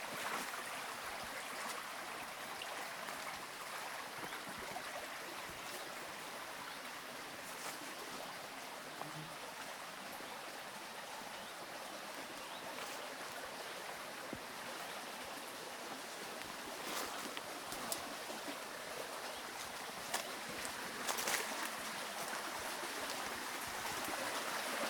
An Sanctóir, Ballydehob, Co. Cork, Ireland - World Listening Day 2020 - a sound collage from Ballydehob
A short soundwalk in the secluded nature reserve around the An Sanctóir Holistic Community Centre in the heart of West Cork. Take your ears for a walk. Walk and listen. Listen again. Live. Enjoy!
County Cork, Munster, Ireland, 18 July, ~15:00